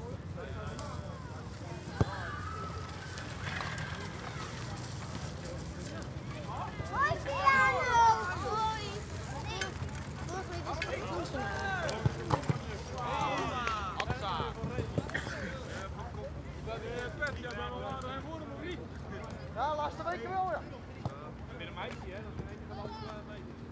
Schiemond, Rotterdam, Nederland - People playing soccer
People playing soccer on public sports fields in Schiehaven, Rotterdam.
Recorded with Zoom H2 internal mics.